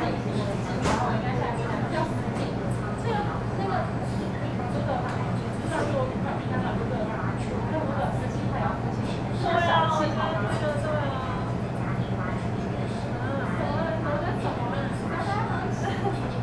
Nanzih District, Kaohsiung - In convenience stores
In convenience stores, Sony PCM D50
高雄市 (Kaohsiung City), 中華民國, 5 April 2012, ~13:00